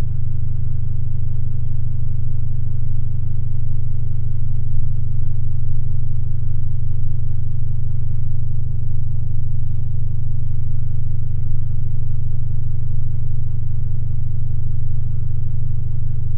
This is the biggest dump of Belgium. A factory is using gas coming from the garbages in aim to produce electricity. Recording of the boiler.
2 October 2016, 15:15